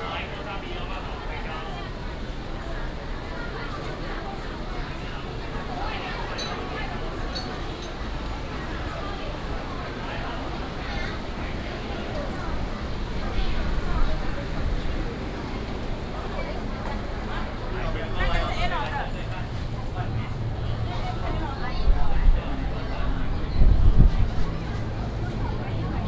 Walking in the dusk market, Traffic sound, vendors peddling, Binaural recordings, Sony PCM D100+ Soundman OKM II